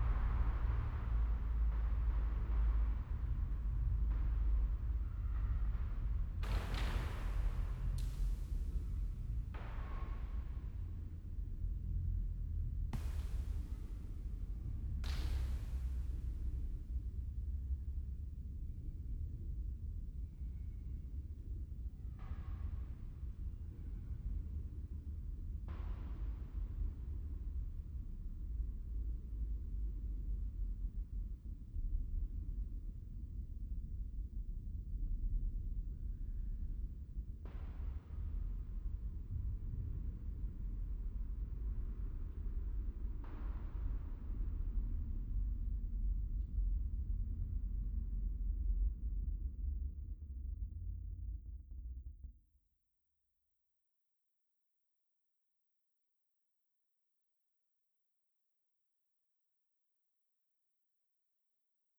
Im Kirchenraum der St. Andreas Kirche. Die Stille des Ortes im Hintergrund der Stadtambience.
Inside the St. Andreas Church. The silence of the space.
Projekt - Stadtklang//: Hörorte - topographic field recordings and social ambiences